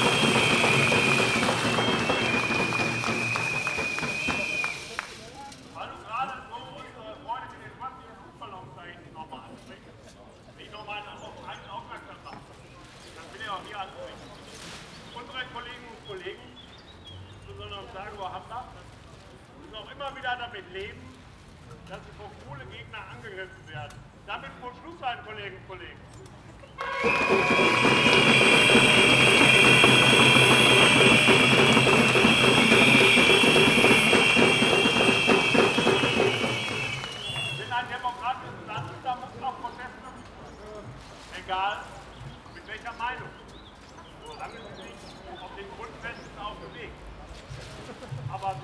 Altstadt-Nord, Köln, Deutschland - Demonstration of brown coal miners
500 workers from the brown coal industry demonstrate for their workplace which they fear are in danger since the government is pushing for a reduction of CO2 emissions. A representative of the union is speaking.
Köln, Germany, 21 May, 13:30